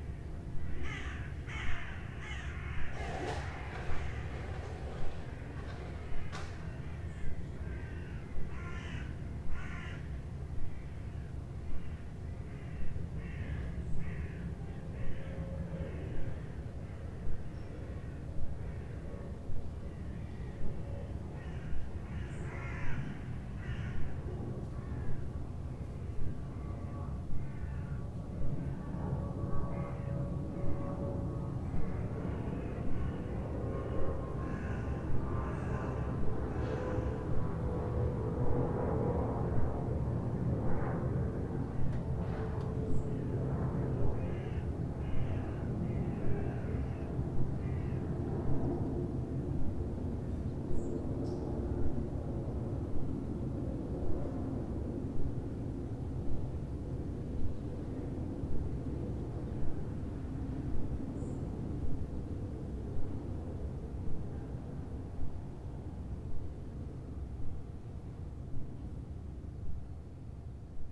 Pfungststrasse, Frankfurt, Germany - Sunday morning on the balcony
Sunday morning sounds, birds, planes, neighbours. recorded on a Zoom H4. staying in Frankfurt to mount an exhibition of 3d work by Eva Fahle-Clouts with a new stereo mix of my FFOmeetsFFM soundscape.